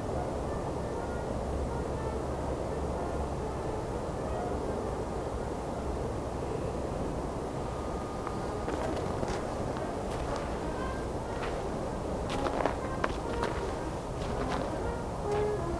{
  "title": "unna, breitenbachgelände, night rehearsal",
  "date": "2010-04-23 18:43:00",
  "description": "in the night. steps on the stoney footway\na rehearsal of a traditional brass ensemble recorded thru a window outside in the cold winter\nsoundmap nrw - social ambiences and topographic field recordings",
  "latitude": "51.54",
  "longitude": "7.70",
  "altitude": "103",
  "timezone": "Europe/Berlin"
}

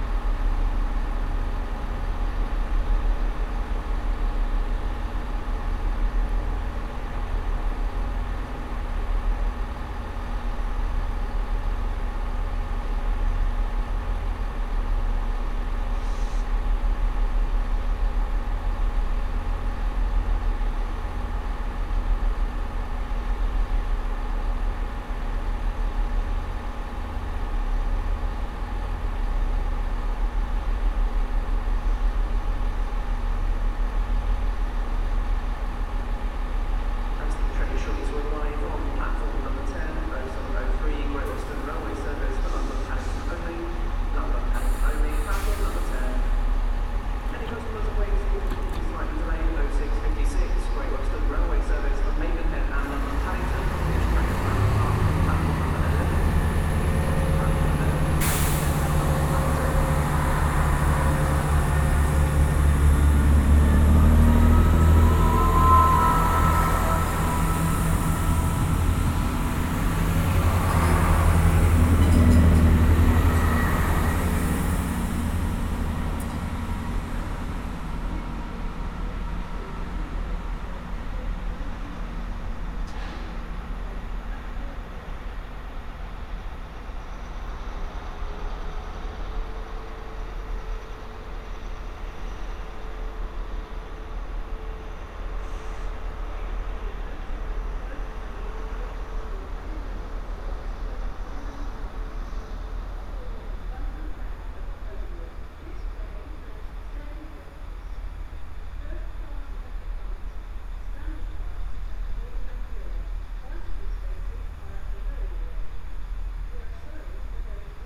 {
  "title": "Bagnall Way, Reading, UK - Reading Railway Station",
  "date": "2017-10-06 06:52:00",
  "description": "Early morning meditation at the eastern end of Reading railway station whilst waiting for a train. The ticking over of the train engine behind me masks more distant sounds, interrupted by male and automated female announcements, the metallic chirping and ringing of rails as a freight train slowly passes, pressure bursts and doors opening and closing. (Tascam DR-05 with binaural PM-01s)",
  "latitude": "51.46",
  "longitude": "-0.97",
  "altitude": "42",
  "timezone": "Europe/London"
}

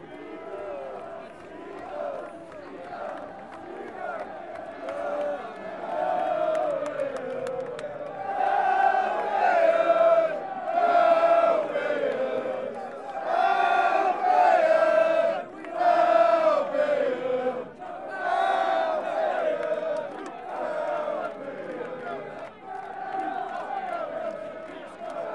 {
  "title": "Madeira Drive, Brighton - Brighton and Hove Albion Football Fans",
  "date": "2011-05-08 06:45:00",
  "description": "The end of the victory parade celebrating Brighton and Hove Albion wining the English League 1. Fans chanting and singing.",
  "latitude": "50.82",
  "longitude": "-0.13",
  "altitude": "11",
  "timezone": "Europe/London"
}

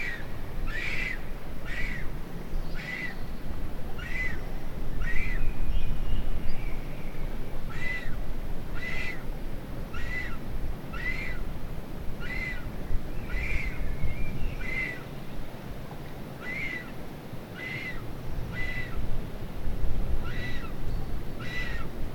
{
  "date": "2013-05-16",
  "description": "Recording of a Vulture's nest in La Fuentona, Soria, Spain. May 2013\nLater in the recording a massive vulture takes off from the nest.",
  "latitude": "41.73",
  "longitude": "-2.85",
  "altitude": "1113",
  "timezone": "Europe/Madrid"
}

